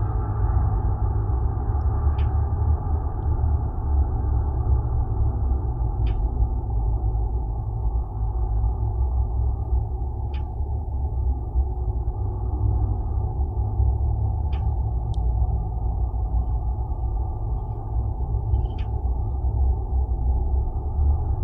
Rytmečio g., Karkiškės, Lithuania - Water tower ladder drone
Dual contact microphone recording of the metal ladder of a water tower. Wind, general ambience and occasional traffic sounds are resonating and blending into a low frequency drone.